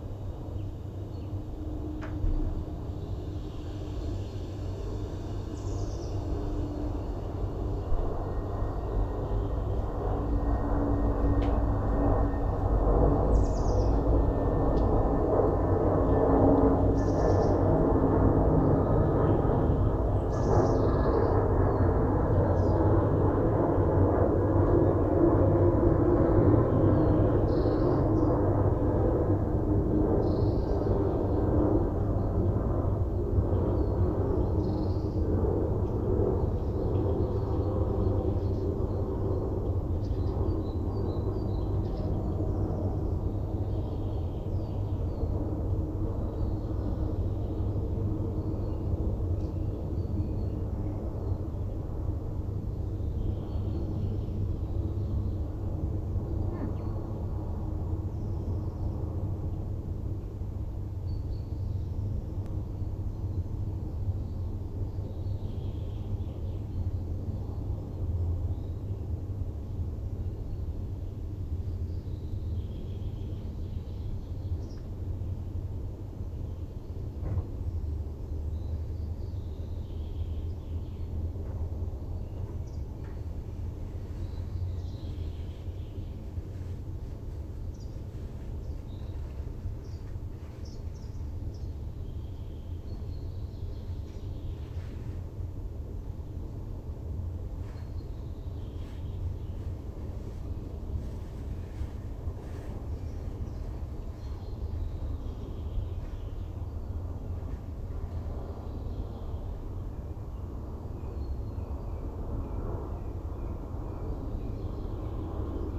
Solnechnaya Ulitsa, Novoaleksandrovo, Moskovskaya oblast, Russia - Birds and planes near Klyazma river
Recorded at Health complex Klyazma during days of the iУчитель contest.
28 April, 06:20